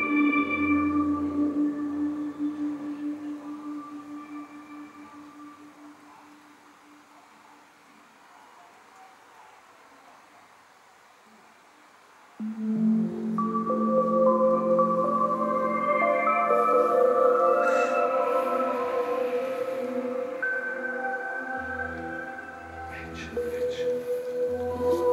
Kosovelova ulica, Nova Gorica, Slovenia - BIO AKUSTIČNI SIGNALI GORIŠKIH VRTNIC
Tisto sončno popoldne sem s prijateljico v centru Nove Gorice snemal sejo Bioloških Ritmov in Signalov Goriških Vrtnic. Ritmi in frekvence so se skozi čas spreminjale, kar nama je lepo dalo vedet v kakšnem vzdušju so takrat bile vrtnice. Posnetek v živo je dolg priblizno eno urco, uraden posnetek (brez zvokov okolice, zivali, ljudi in avtomobilov) bo naknadno tudi še objavljen v boljši studijski zvočni kvaliteti.
Več informacij o poteku snemanja in strukturah Bioloških Ritmov Vrtnic iz tistega popoldneva bodo še naknadno objavljene tudi na moji spletni strani..
Slovenija